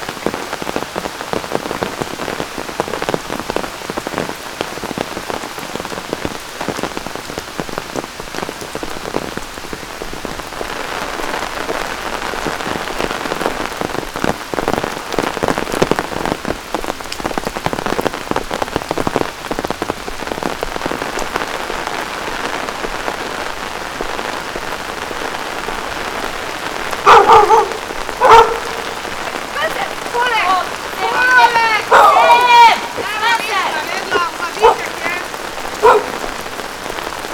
Maribor, city park - night, rain, ubrella, dogs, shouts, walking
Maribor, Slovenia, May 2015